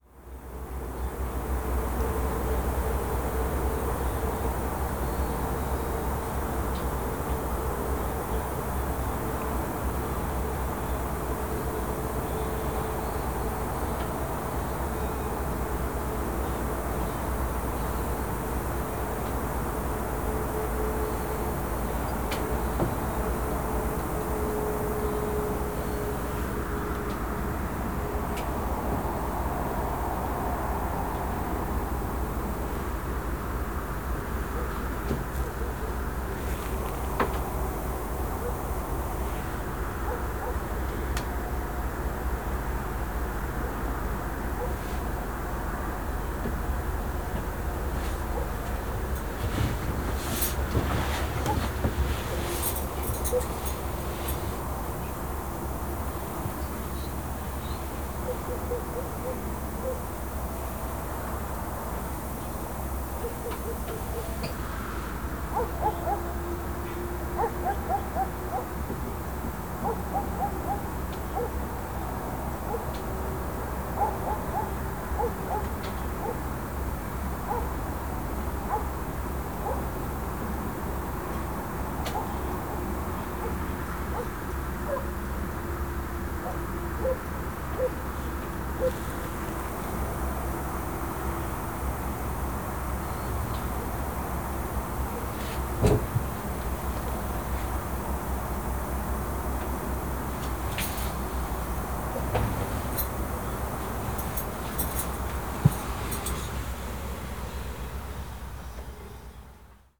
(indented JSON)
{
  "title": "a few kilometers west from the city of Lembork - train stopped",
  "date": "2014-08-14 22:15:00",
  "description": "binaural rec. the train stopped on a red light in the middle of a dark field. distant moan of traffic. dogs bawling into the night. one of the passengers listening to a radio.",
  "latitude": "54.52",
  "longitude": "17.69",
  "altitude": "16",
  "timezone": "Europe/Warsaw"
}